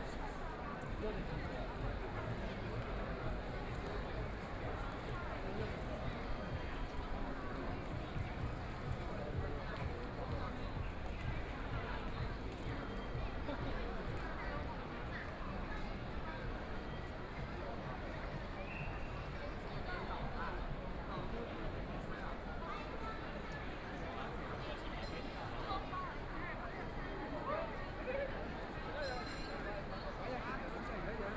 walking in the Store shopping district, Walking through the streets of many tourists, Binaural recording, Zoom H6+ Soundman OKM II
Shanghai, China, 23 November, 18:01